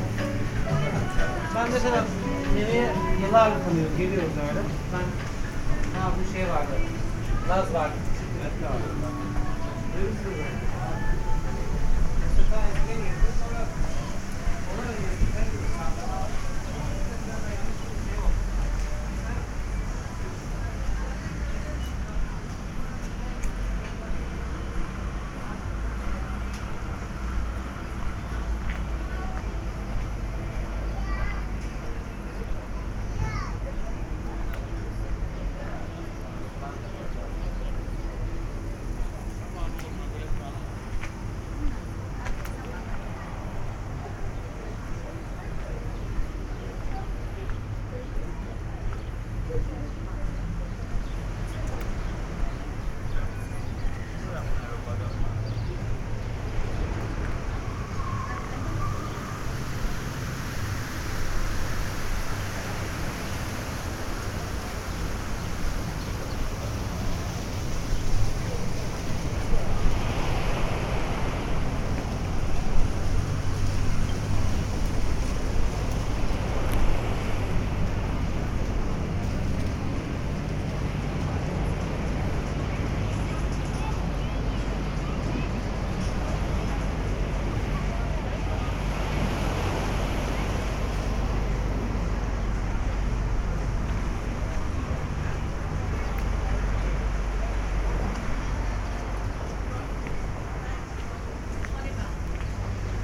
Kalkan, Turkey - 915g walking around main streets
Binaural recording of walk through main streets of Kalkan.
Binaural recording made with DPA 4560 on a Tascam DR 100 MK III.